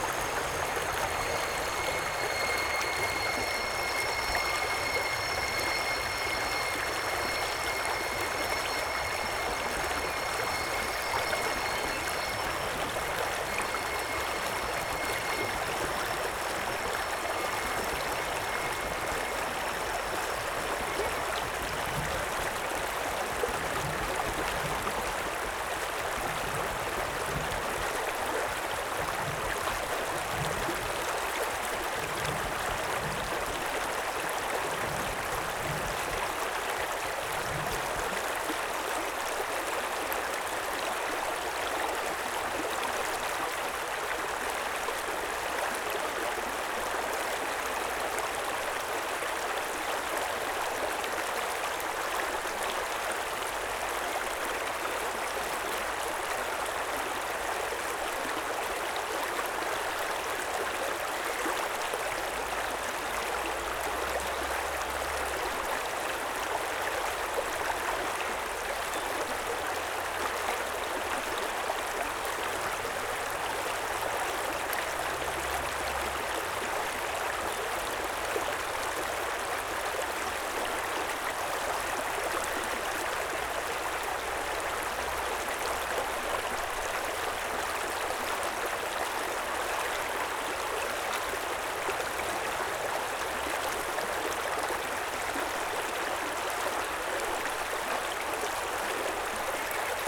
Merri Creek, Northcote, Victoria - Running creek and train tracks
This is recorded on the bank of the small Merri Creek, running through Northcote. Clear sunny spring day, there is a moment where the train squeeks around the bend, travelling slowly between stops.
Recorded using Zoom H4n, standard stereo mics.